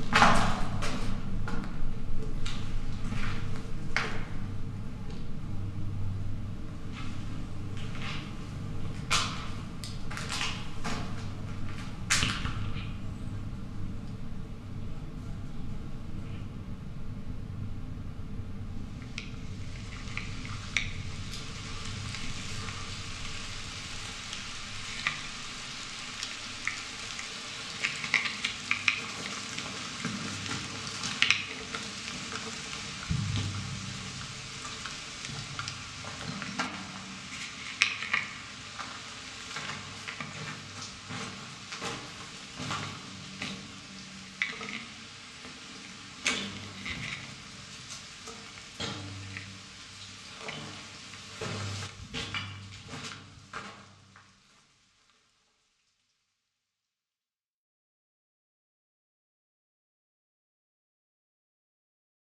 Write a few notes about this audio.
Stasi, bunker, abandoned, DDR, orchard, ruin, Background Listening Post